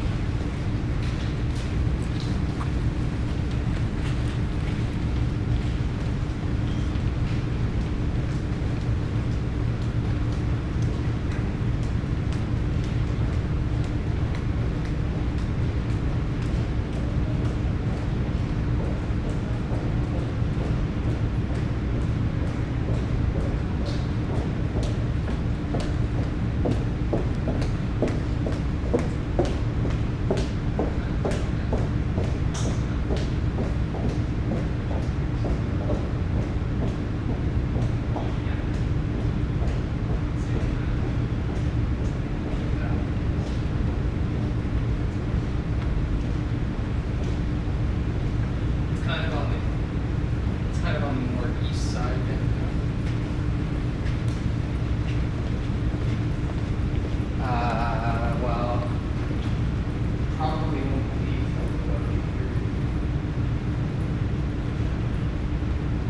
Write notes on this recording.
sound of the bridge on the +15 walkway Calgary